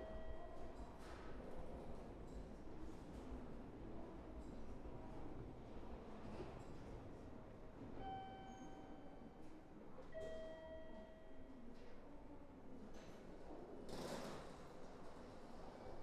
Tateiwa, Iizuka, Fukuoka, Japan - Suitcase
Some sounds familiar to Shin Iizuka Station.
福岡県, 日本, May 2018